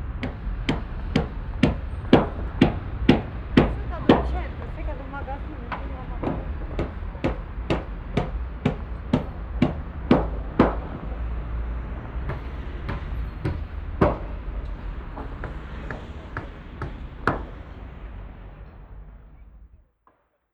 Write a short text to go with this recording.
At the Piata Unini. The sound of the construction of a wooden platform for the winter scating rink reverbing on the big central square. international city scapes - topographic field recordings and social ambiences